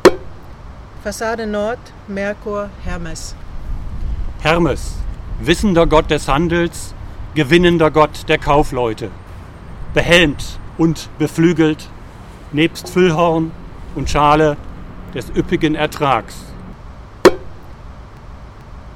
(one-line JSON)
{"title": "Echos unter der Weltkuppel 04 Merkur Hermes", "date": "2009-11-01 14:12:00", "latitude": "53.56", "longitude": "9.99", "altitude": "12", "timezone": "Europe/Berlin"}